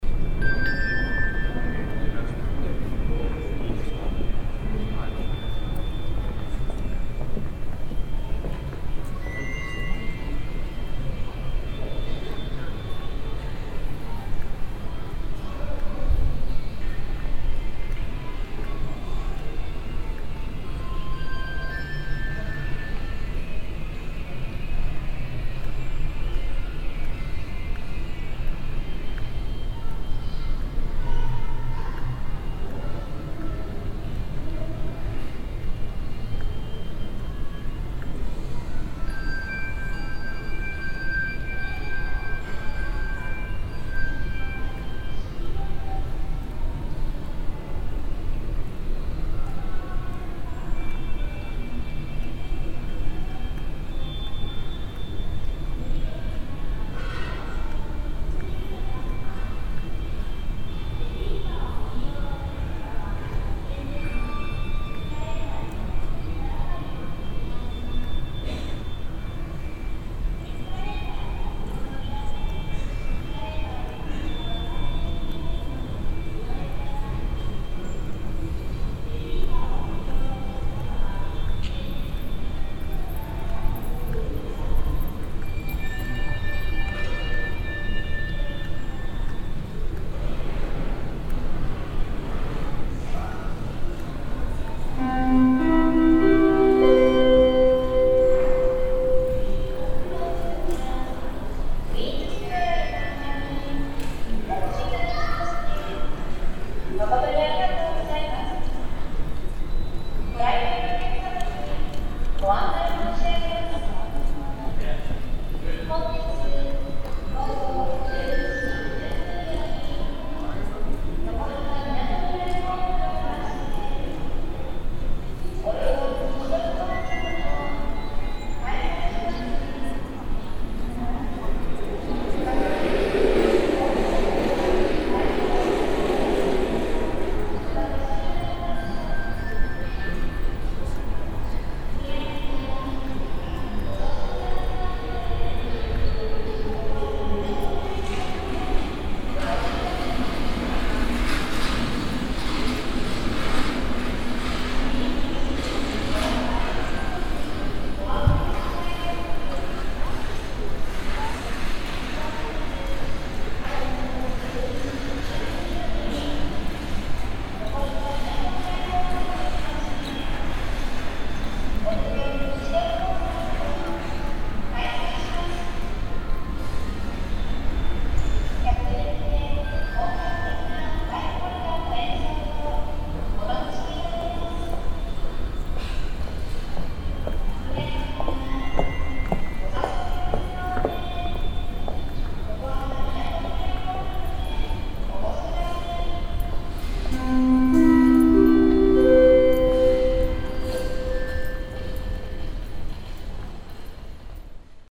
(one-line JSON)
{"title": "yokohama, queens square, announcement, installation, jingle", "date": "2011-07-01 11:47:00", "description": "Inside the shopping malls huge, high stone and glass passage architecture. Footsteps, the electric bells of a sound installation, an announcement, a jingle.\ninternational city scapes - topographic field recordings and social ambiences", "latitude": "35.46", "longitude": "139.63", "altitude": "52", "timezone": "Asia/Tokyo"}